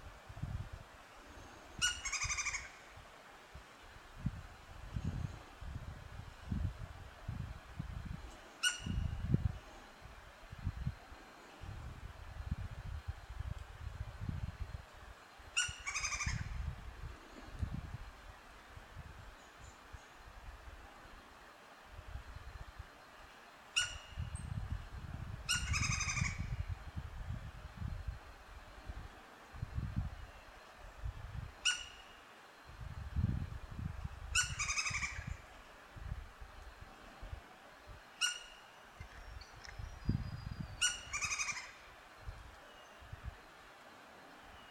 calm and monotone ambience at the border of the forest, São Sebastião da Grama - SP, Brasil - woodpecker vocalization in the bord of the forest.
Here you are hearing a woodpecker in the subtropical forest of southeast Brazil. This soundscape archive is supported by Projeto Café Gato-Mourisco – an eco-activism project host by Associação Embaúba and sponsors by our coffee brand that’s goals offer free biodiversity audiovisual content.
Recorded with a Canon DlSR 5d mark II
We apreciare a lot your visit here. Have fun! Regards
Pedro Lotti C. Dias
Região Sudeste, Brasil